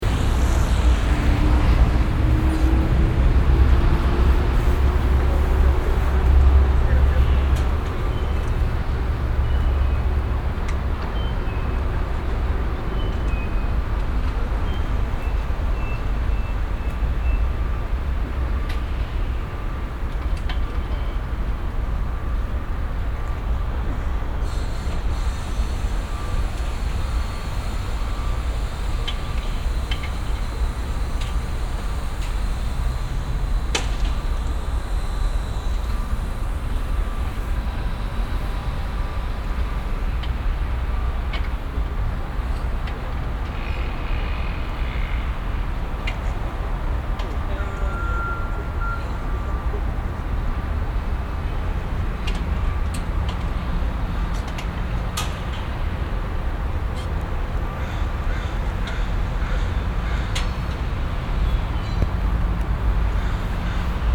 vancouver, construction close to bc palace - vancouver, construction close to bc place
early afternoon, workers in a secured road, some wind, some birds, the beeping sound of a construction car driving backwards
soundmap international
social ambiences/ listen to the people - in & outdoor nearfield recordings
new street close to bc place